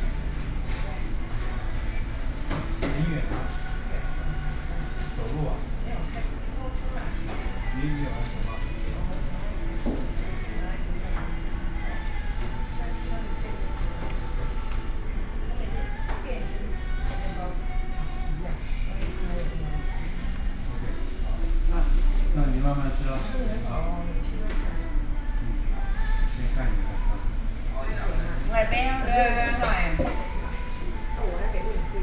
Neihu District - In the restaurant
in the Yoshinoya, Sony PCM D50 + Soundman OKM II